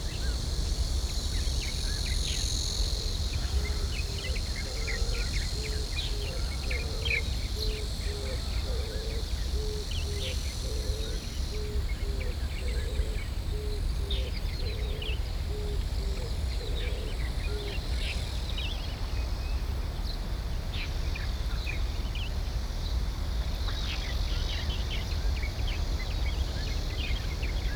磺溪, 萬壽里 Jinshan District - Bird calls
Next to the stream, Bird calls
Zoom H4n+Rode NT4 ( SoundMap 20120711-22)